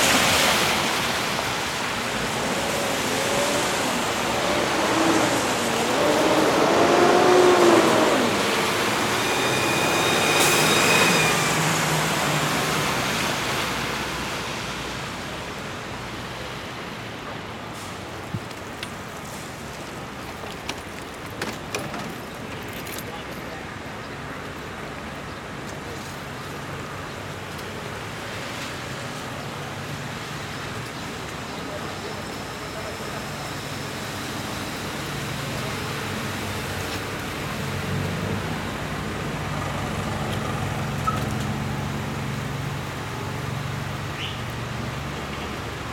E 42nd St, Extension, NY, USA - Exiting Grand Central-42nd Street
Exiting Grand Central-42nd Street Station through a less known passage that leads to a lobby of a building.
United States